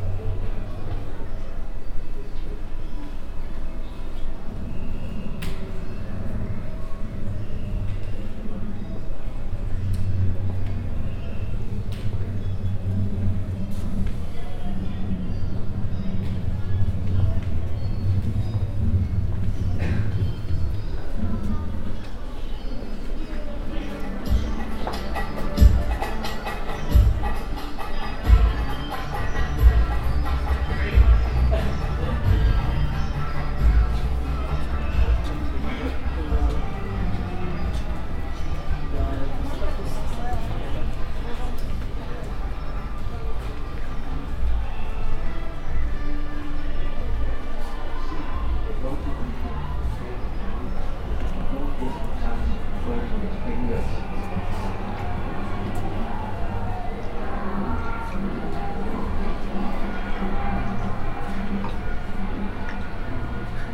Centre Pompidou, Paris. Paris-Delhi-Bombay...
A soundwalk around the Paris-Delhi-Bombay... exhibition. Part 2
Paris, France